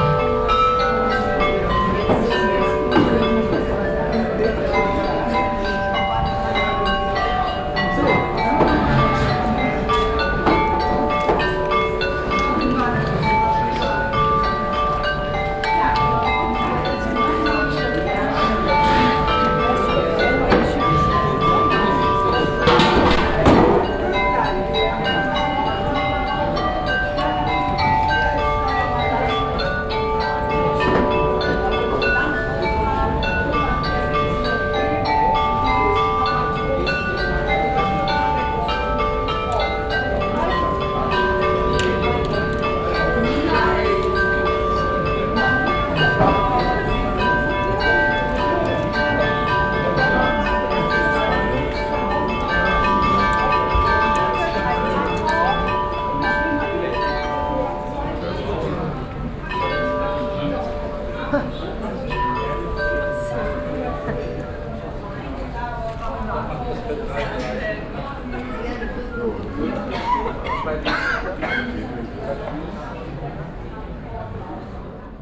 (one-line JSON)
{"title": "Altstadt, Bremen, Deutschland - bremen, böttcherstraße, carillion house", "date": "2012-06-13 16:00:00", "description": "Inside a small street walking on front of the carillion house. The sound of the hourly bell play and the conversations of tourists and visitors watching the spectacle.\nsoundmap d - social ambiences and topographic field recordings", "latitude": "53.07", "longitude": "8.81", "altitude": "15", "timezone": "Europe/Berlin"}